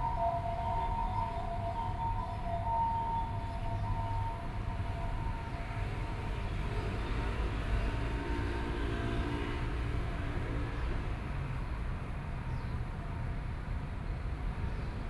北投區大同里, Taipei City - below the track
Traffic Sound, Sitting below the track, MRT train passes
Sony PCM D50+ Soundman OKM II